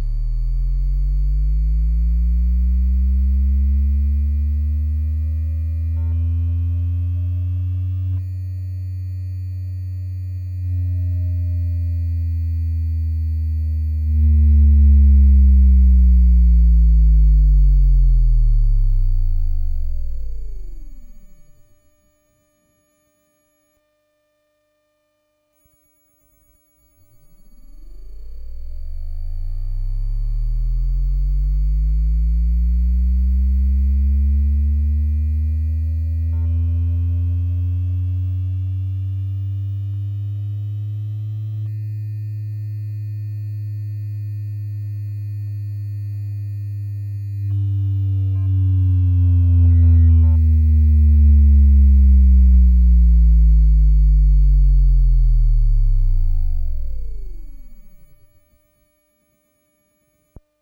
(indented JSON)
{
  "title": "Traundorfer Str., Linz, Austria - Tram electromagnetic signals for 1 stop",
  "date": "2020-09-05 15:30:00",
  "description": "Humans are unable to perceive electromagnetic signals at audio frequencies, yet we are surrounded by them constantly. Anything electrical or electronics makes them. However it is possible to listen to them using cheap devices sold as telephone pick-ups. This recording uses one to track the electromagnetic signals created by a tram as it travels from Hillerstrasse to Kaserne.",
  "latitude": "48.25",
  "longitude": "14.34",
  "altitude": "275",
  "timezone": "Europe/Vienna"
}